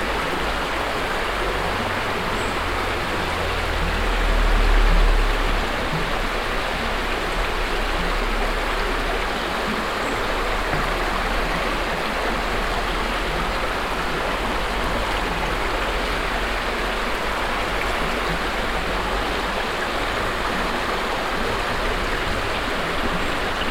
{"title": "goebelsmühle, bridge, schlinder", "date": "2011-08-08 19:24:00", "description": "Under a train bridge at the river Schlinder. The sound of the peaceful floating water. In the distance the sound of a lorry passing by.\nGoebelsmühle, Brücke, Schlinder\nUnter einer Zugbrücke am Fluss Schlinder. Das Geräusch des ruhig fließenden Wassers. In der Ferne fährt ein Lastwagen vorbei.\nGoebelsmühle, pont, Schlinder\nSous un pont ferroviaire aux bords du fleuve Schlinder. Le bruit de l’eau qui s’écoule paisiblement. Dans le lointain, on entend un camion pass\nProject - Klangraum Our - topographic field recordings, sound objects and social ambiences", "latitude": "49.92", "longitude": "6.06", "altitude": "254", "timezone": "Europe/Luxembourg"}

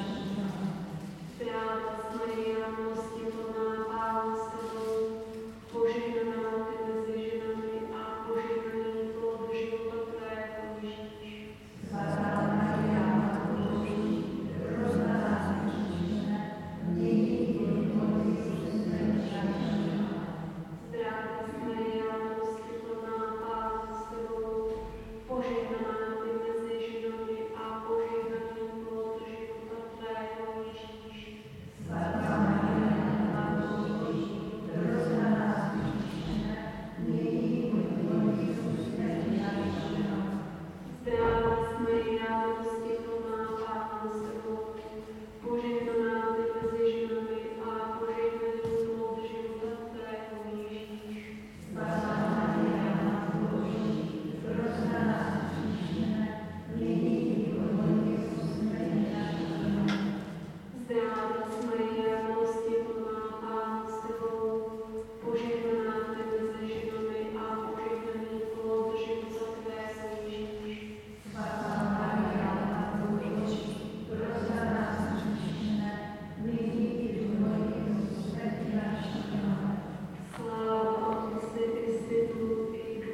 {"title": "Sv. Bartolomej Prayers - Sv. Bartolomej", "date": "2015-04-11 11:30:00", "description": "Prayer at the 11:30 am Mass Service of an April Saturday", "latitude": "50.08", "longitude": "14.42", "altitude": "202", "timezone": "Europe/Prague"}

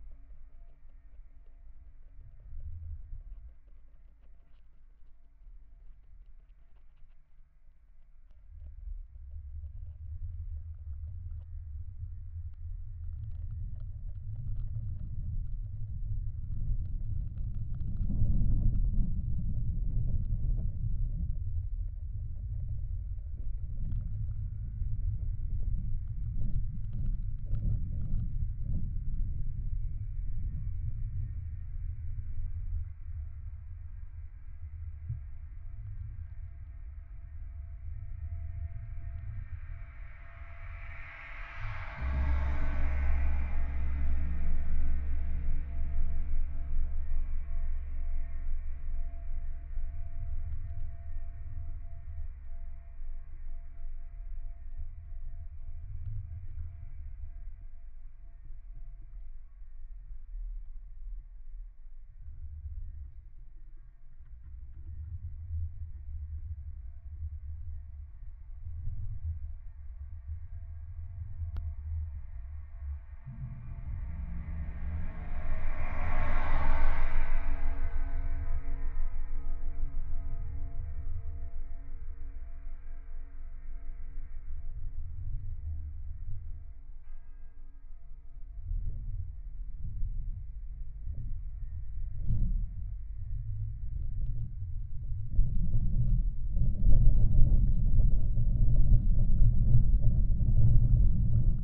{"title": "Žalioji, Lithuania, mics on railings", "date": "2018-08-15 18:40:00", "description": "contact mics on the railings of bridge...cars passing by...", "latitude": "55.62", "longitude": "25.43", "altitude": "83", "timezone": "GMT+1"}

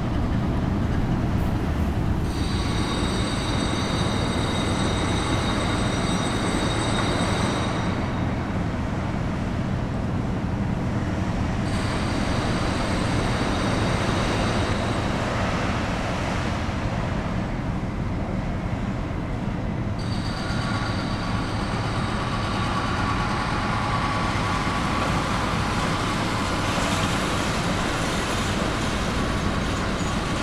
{"title": "Charleroi, Belgium - Industrial soundscape", "date": "2018-08-15 08:10:00", "description": "Industrial soundscape near the Thy-Marcinelle wire-drawing plant. A worker unload metal scrap from a boat, and another worker is destroying a wall with an horrible drill. Not a very relaxing sound...", "latitude": "50.41", "longitude": "4.43", "altitude": "103", "timezone": "GMT+1"}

